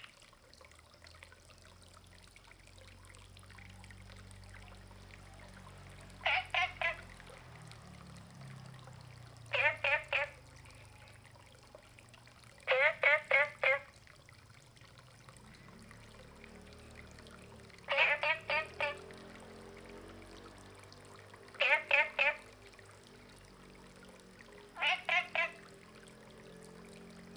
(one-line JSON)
{"title": "Green House Hostel, Puli Township - Frogs chirping", "date": "2015-04-28 22:17:00", "description": "Frogs chirping\nZoom H2n MS+XY", "latitude": "23.94", "longitude": "120.92", "altitude": "495", "timezone": "Asia/Taipei"}